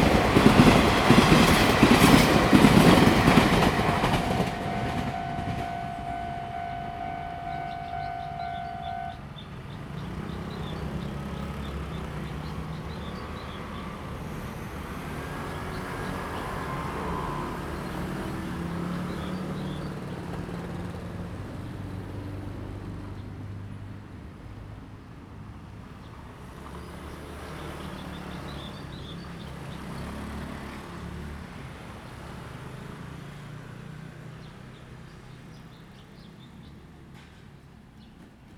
Mingde St., 彰化縣花壇鄉 - in the railroad crossing
in the railroad crossing, Bird call, Traffic sound, The train passes by
Zoom H2n MS+ XY
Huatan Township, Changhua County, Taiwan, March 2017